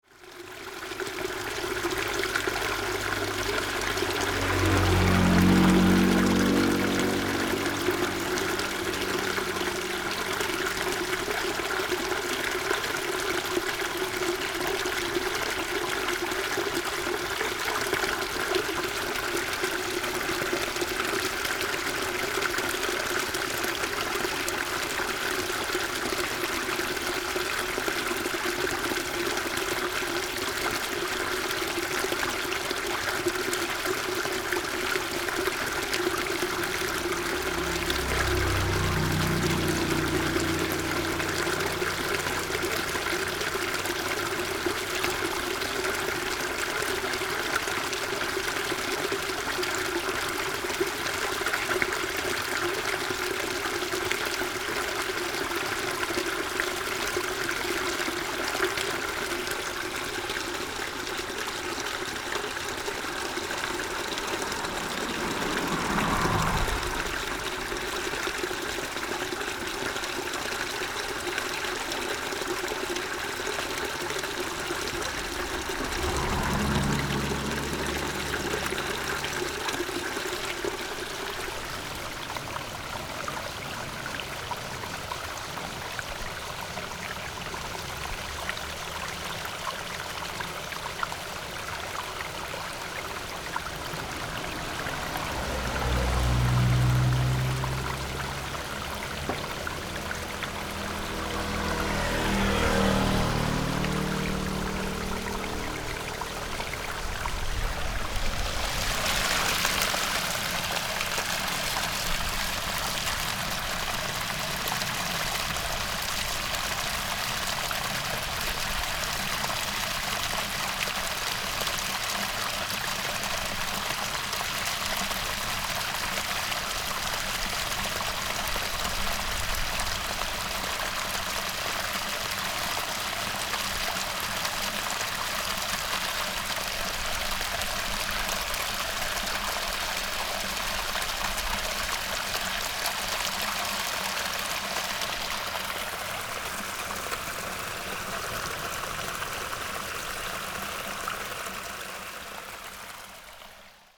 Farmland irrigation waterway, The sound of water, Bird calls, traffic sound
Sony PCM D50
New Taipei City, Linkou District, 106縣道22號, 4 July 2012